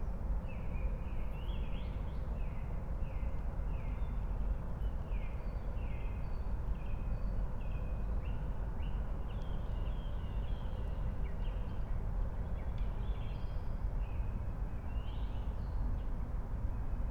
04:30 Berlin, Königsheide, Teich - pond ambience